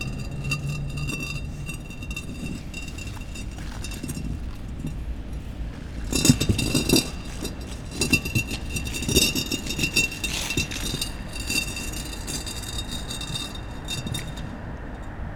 Siilotie, Oulu, Finland - Walking inside a defunct workshop
Walking inside a defunct workshop at nighttime. Stepping on various objects and moving them. Cars moving by on the nearby road. Zoom H5 and LOM Uši Pro microphones.
Manner-Suomi, Suomi, 1 August 2020